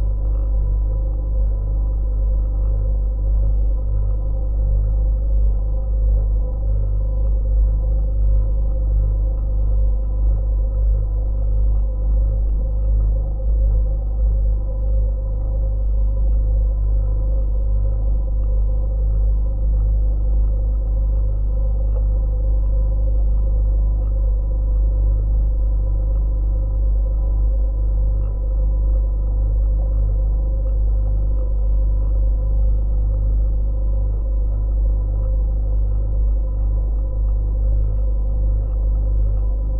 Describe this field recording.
Geophone on the bottom of wooden boat with motor